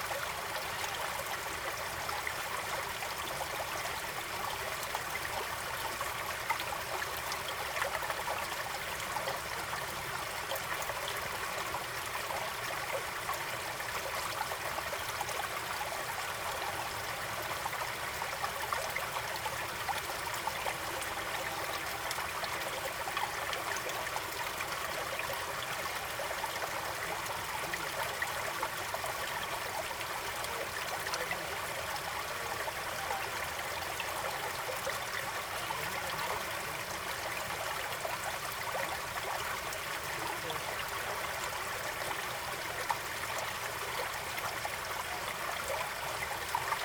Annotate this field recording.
Recorded with a Marantz PMD661 and a pair of DPA4060s.